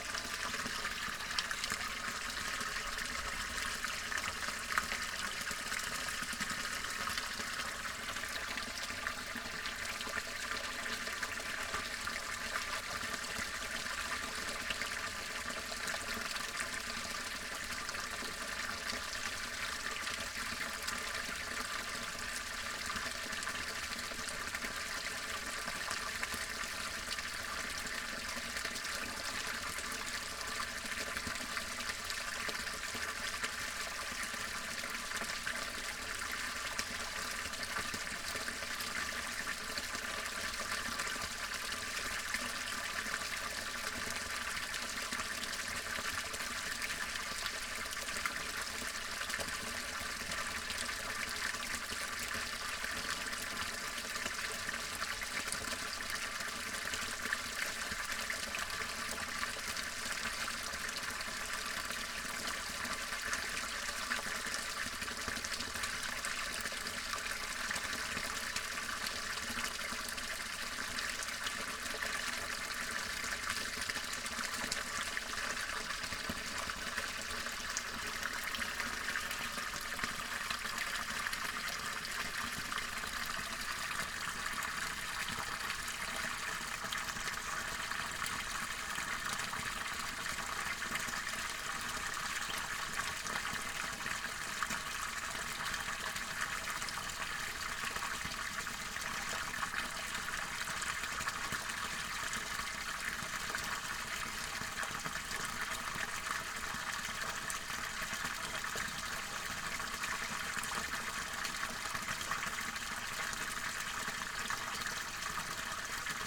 Eschenau, Kerkerbachtal - water flow, former iron ore mine
Seeking shelter from rain, in the remains of an old iron ore mine. The inscription says: "Eisensegen 1937", but in fact mining here and in the whole area started already in the 18th century. Clear water flows out of a tube, some people come here daily to fill their bottles and canisters for drinking water.
(Sony PCM D50, Primo EM272)